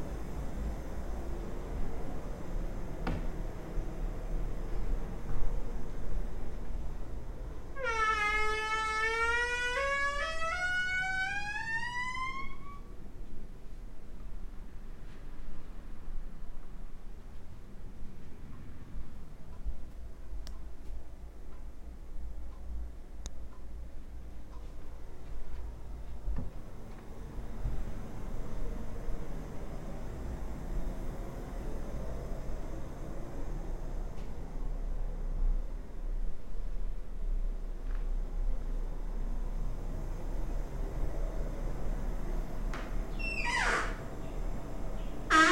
while windows are open, Maribor, Slovenia - doors, cafetera, clock
creaking doors, walk from east to west side